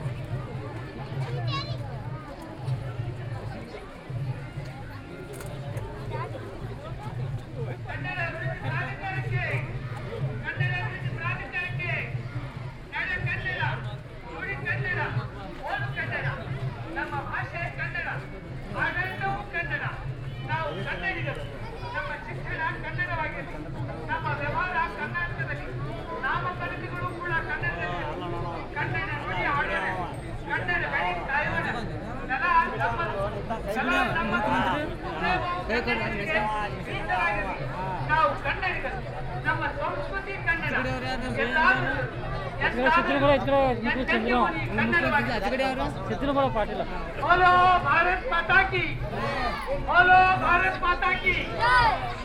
India, Karnataka, Saundatti, march, Kannada, fanfare, Kannada is one of the twenty-two official languages of India and is the sole administrative language of the State of Karnataka. It is also one of the four classical languages of India.
Karnataka, India, 23 February, ~17:00